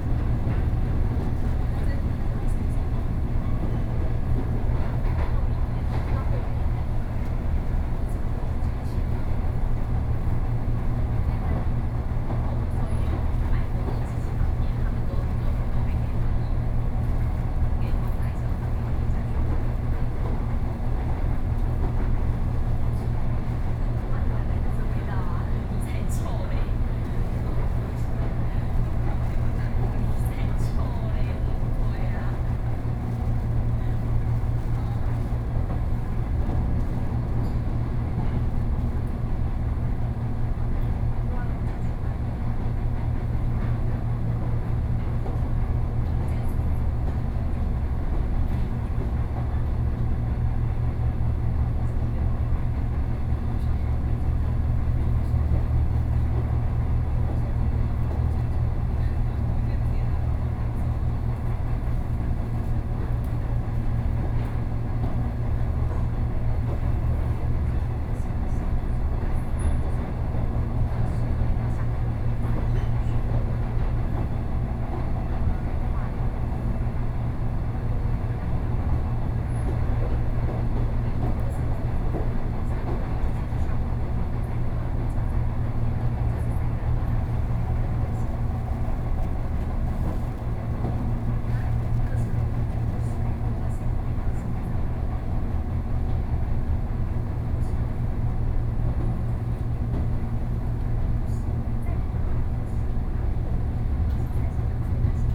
Yangmei - TRA
from Puxin Station to Yangmei Station, Sony PCM D50+ Soundman OKM II